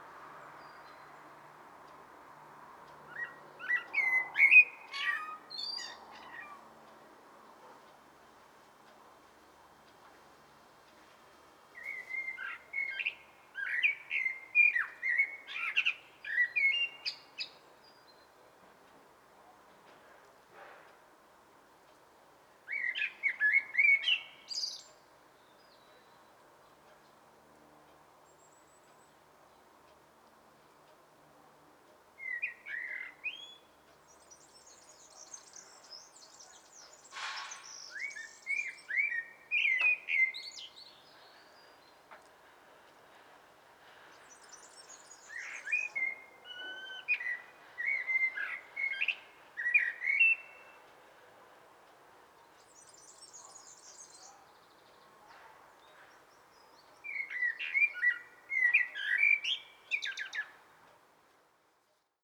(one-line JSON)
{"title": "Friedrichsdorf, Deutschland - Solo Song Bird", "date": "2015-04-29 14:30:00", "description": "A single song bird tweets in the afternoon sun. Recordng is edited with a highpass at 900hz and 12db/okt", "latitude": "50.25", "longitude": "8.64", "altitude": "209", "timezone": "Europe/Berlin"}